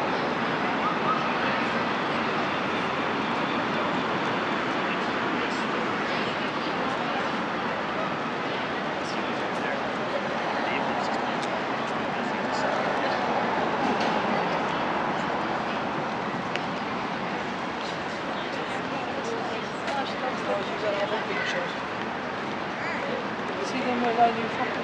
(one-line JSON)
{"title": "Donegall Square N, Belfast, UK - Belfast City Hall-Exit Strategies Summer 2021", "date": "2021-07-04 17:50:00", "description": "Recording of the green space in front of the city hall with people walking, sitting, and/or talking. In the background there are some vehicles passing and a few moments are birds flying.", "latitude": "54.60", "longitude": "-5.93", "altitude": "14", "timezone": "Europe/London"}